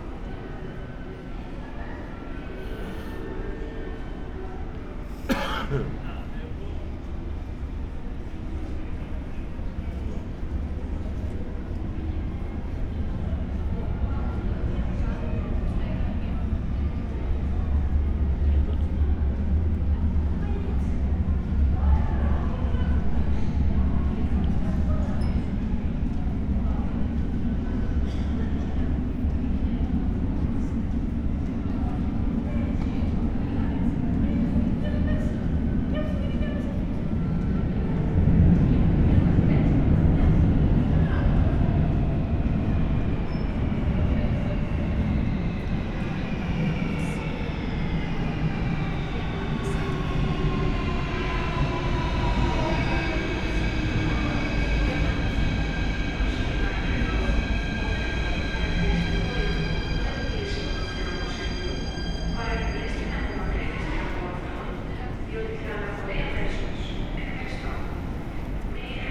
Sygrou-Fix metro station, Neos Kosmos, Athens - station ambience
the departing metro trains at Sygrou-Fix station produce at remarkable deep drone after they've left the station
(Sony PCM D50, Primo EM172)
Athina, Greece, 5 April 2016, ~20:00